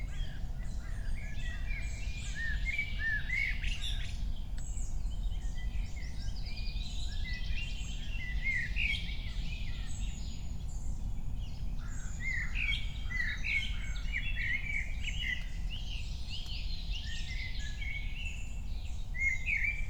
Königsheide, Berlin - forest ambience at the pond
7:00 drone, trains, frog, crows, more birds